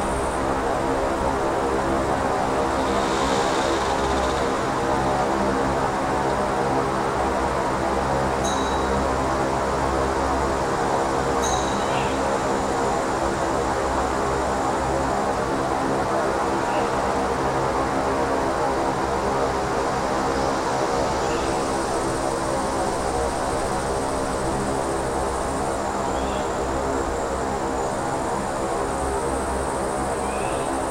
Utena, Lithuania, natural drone
some metallic gate guarding the road to the arboretum. I placed two micro mics into the tubes of the gates and...there was drone.